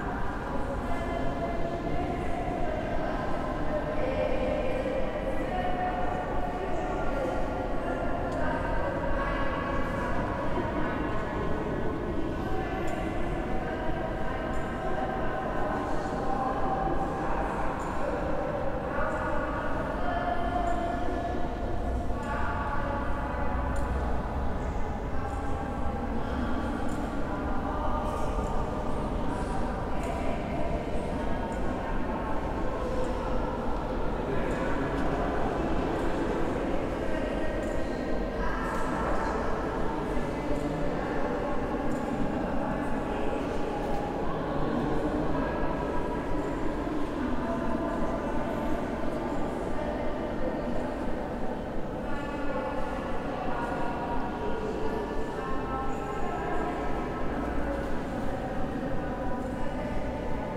Ambient inside St. Mary Church in Gdansk, Poland